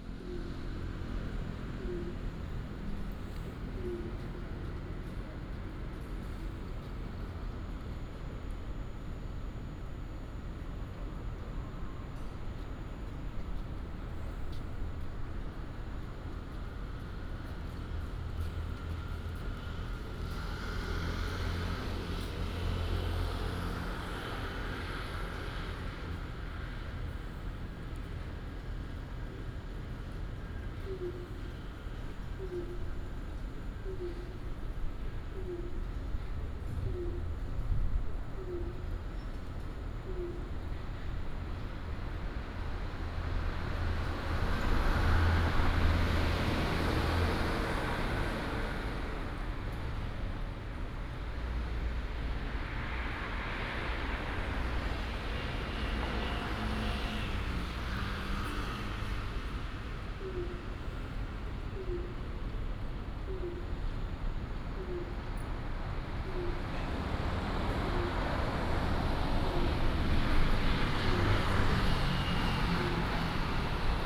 Ln., Chang’an W. Rd., Taipei City - The streets of the morning
The streets of the morning, traffic sounds, bird
Binaural recordings